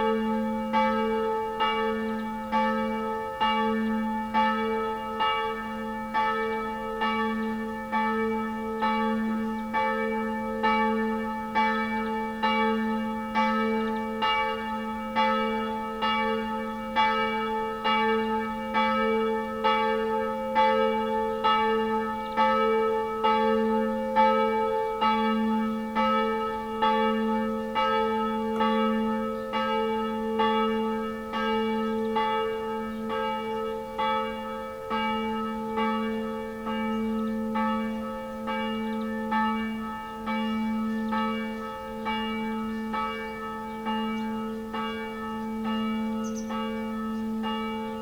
Šentjob, Koroška - Church Bell in St. Job (schuettelgrat)